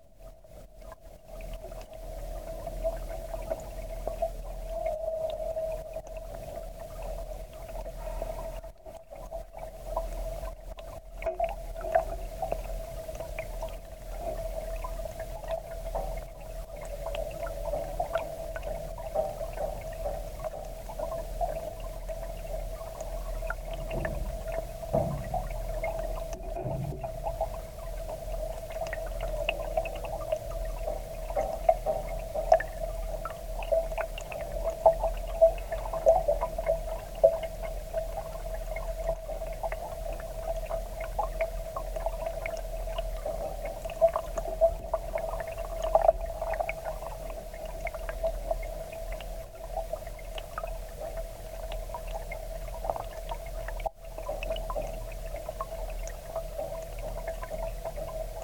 Sint Jacobiparochie, The Netherlands - water drains back to the wadden sea - hydrophone
hydrophone recording of water draining back from the high tide into the sea
11 November